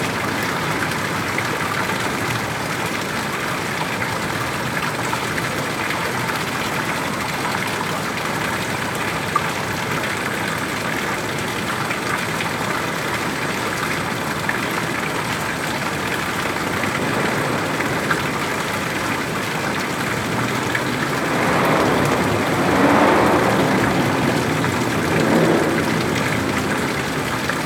Sounds from a small waterfall next to the Holy Family Roman Catholic Church, Midtown, NYC.
New York, United States, 23 August 2022